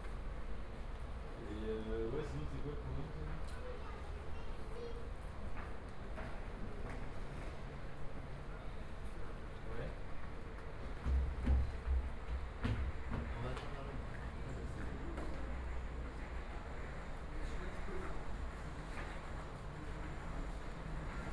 Binaural recording of a railway platform announcement; SNCF train to Nantes.
recorded with Soundman OKM + Sony D100
sound posted by Katarzyna Trzeciak